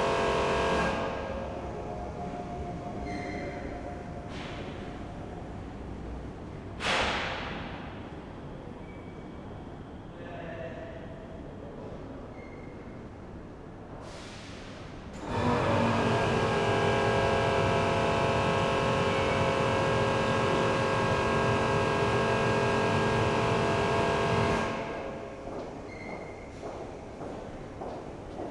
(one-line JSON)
{"title": "köln, neumarkt, u-bahn - workers cleaning stairs", "date": "2011-04-10 23:35:00", "description": "köln neumarkt, u-bahn, subway passage, sunday night, workers cleaning stairway with high pressure cleaner", "latitude": "50.94", "longitude": "6.95", "altitude": "58", "timezone": "Europe/Berlin"}